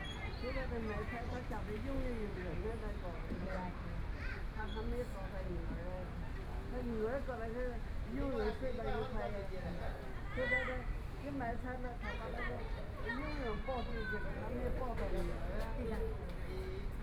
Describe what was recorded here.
Kids play area, Voice chat between elderly, Holiday in the Park, Sitting in the park, Traffic Sound, Birds sound, Please turn up the volume a little. Binaural recordings, Sony PCM D100+ Soundman OKM II